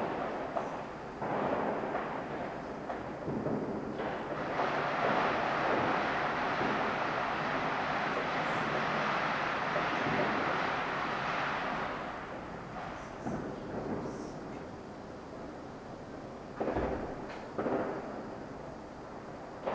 Fireworks are heard from around the Fuqun Gardens community, as midnight approaches. Through the windows, Thello can be heard complaining about having her surgical site cleaned. Recorded from the front porch. Stereo mics (Audiotalaia-Primo ECM 172), recorded via Olympus LS-10.